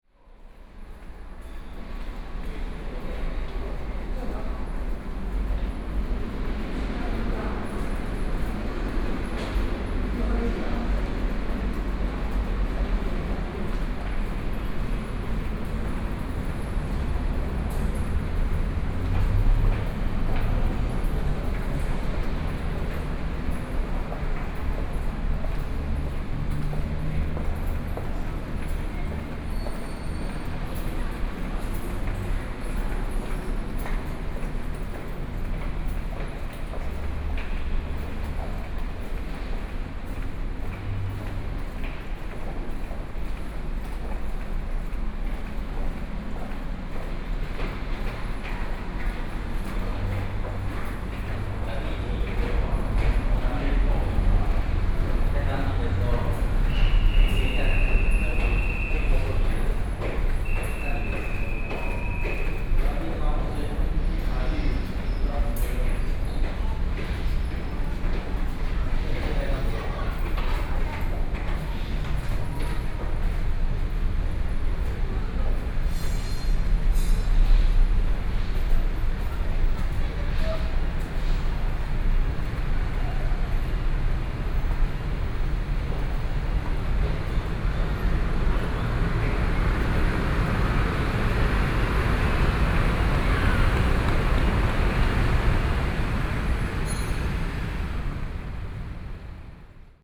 {
  "title": "Xinzhuang, New Taipei City - Touqianzhuang Station",
  "date": "2013-08-16 13:33:00",
  "description": "soundwalk, From the station through the underground passage out of the station, Sony PCM D50 + Soundman OKM II",
  "latitude": "25.04",
  "longitude": "121.46",
  "altitude": "11",
  "timezone": "Asia/Taipei"
}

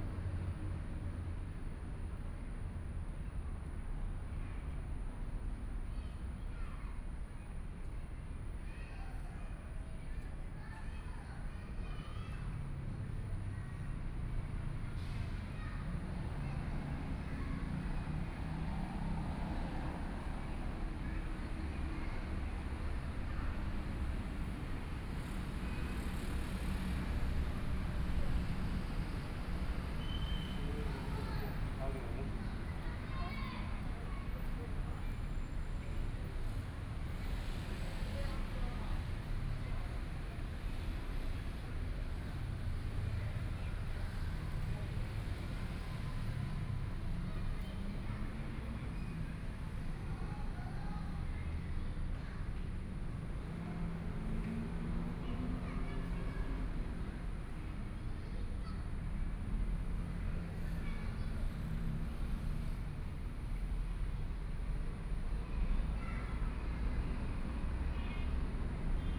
{
  "title": "Beitou, Taipei - MRT train",
  "date": "2013-09-30 19:33:00",
  "description": "MRT trains through, Sony PCM D50 + Soundman OKM II",
  "latitude": "25.13",
  "longitude": "121.50",
  "altitude": "12",
  "timezone": "Asia/Taipei"
}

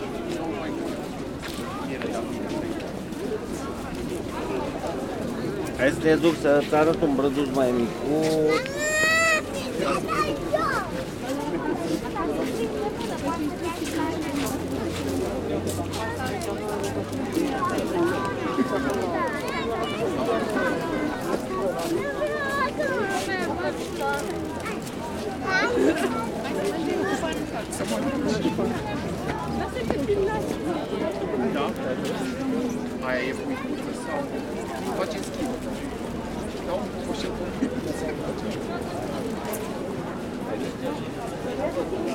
{
  "title": "Piața Sfatului, Brașov, Romania - 2016 Christmas in Brasov - Christmas Fair 1",
  "date": "2016-12-25 17:02:00",
  "description": "A recording of a Christmas fair in the man square of the city, back in a time where many people could get crowded together...and when coughs didn't freak anyone out :) Recorded with Superlux S502 Stereo ORTF mic and a Zoom F8 recorder.",
  "latitude": "45.64",
  "longitude": "25.59",
  "altitude": "592",
  "timezone": "Europe/Bucharest"
}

